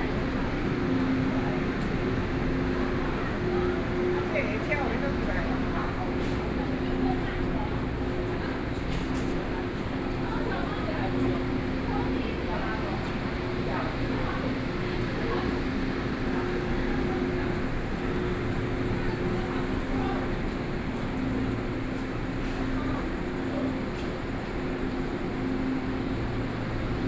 Pingtung Station, Taiwan - Walking in the station
From the station platform, Through the underground passage, Went outside the station